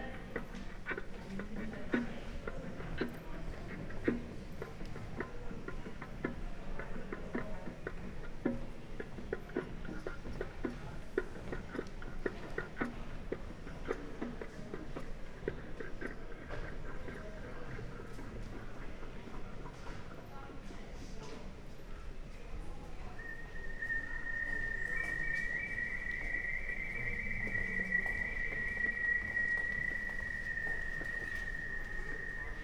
Am Schokoladenmuseum, Köln - exhibition room
tiny sound installation at the exhibition room
(Sony PCM D50, Primo EM172)